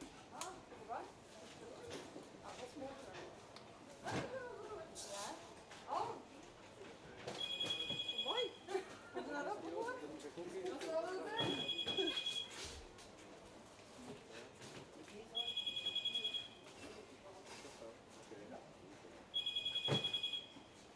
Molenstraat, Londerzeel, België - That Saturday in the Colruyt.
Zoom H-6 XY-mic on top of the groceries